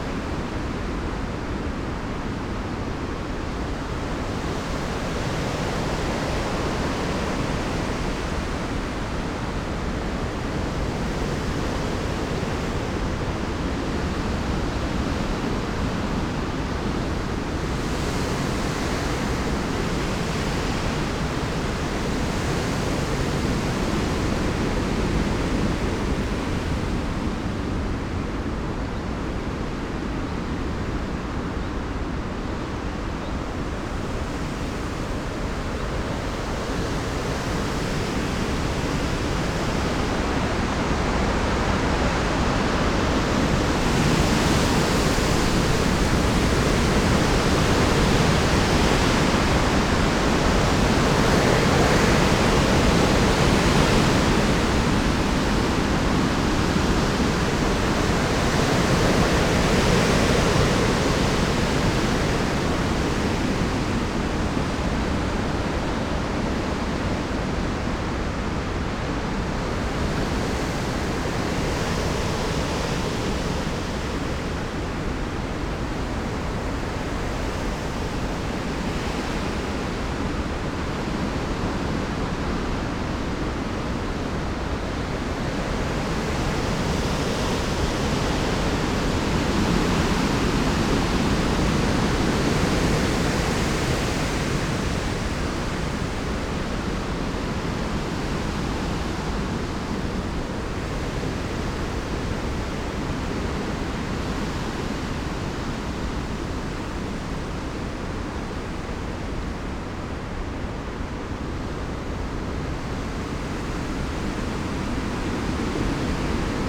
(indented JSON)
{
  "title": "Unnamed Road, Wedmore, UK - storm gareth blows through the ampitheatre ...",
  "date": "2019-04-27 10:20:00",
  "description": "storm gareth blows through the ampitheatre ... calcott moor nature reserve ... pre-amped mics in a SASS ... very occasional bird song ...",
  "latitude": "51.16",
  "longitude": "-2.85",
  "altitude": "4",
  "timezone": "Europe/London"
}